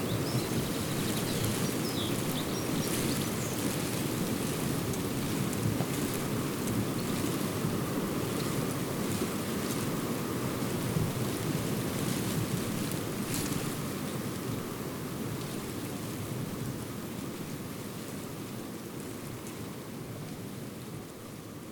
Newborough / Niwbwrch, UK - Wind in the marram at Newborough / Niwbwrch
Wind in the marram grass at Newborough / Niwbwrch, recorded with a Zoom H4n recorder and Rode wind muff and tripod. Bass cut EQ applied.
Llanfairpwllgwyngyll, UK, 28 April